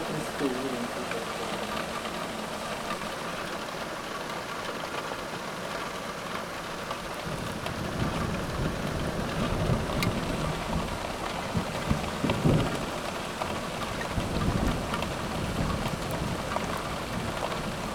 Poznan, Jezyce district, Kochanowskiego - brick drops
recording heavy rain through a narrow slit of ajar window. drops drumming on roof tiles.